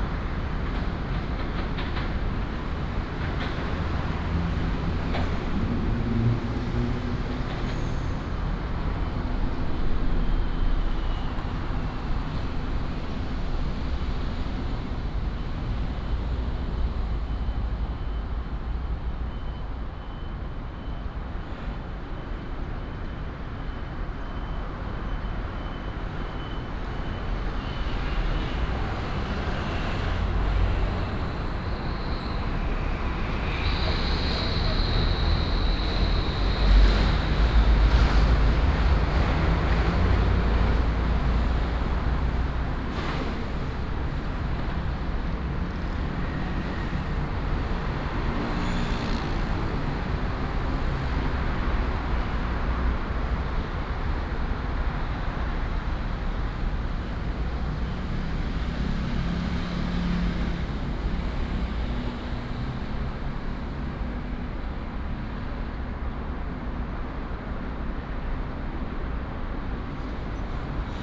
Qianjia Rd., East Dist., Hsinchu City - Under the elevated fast road
Under the elevated fast road, Traffic sound, Binaural recordings, Sony PCM D100+ Soundman OKM II